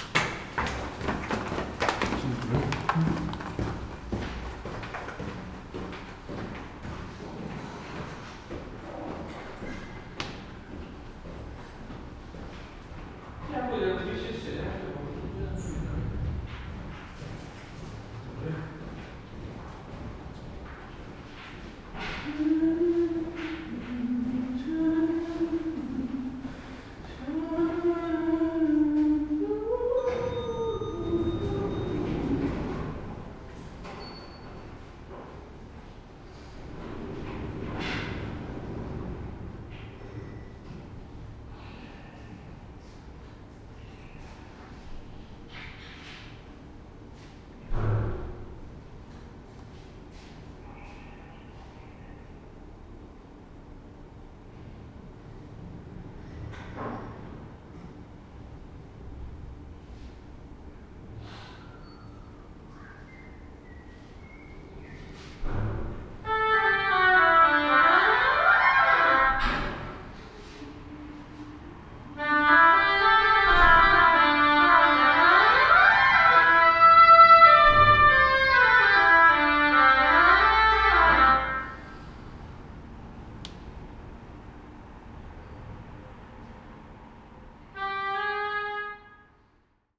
Poznan, Fredry street, Grand Theater - practice
recorded in one of the corridors of the Grand Theatre in Poznan. Orchestra member practicing their instrument. A few employees walk across the corridor giving me suspicious looks. One of them sings a little tune. (sony d50)
Poznań, Poland, 9 June 2018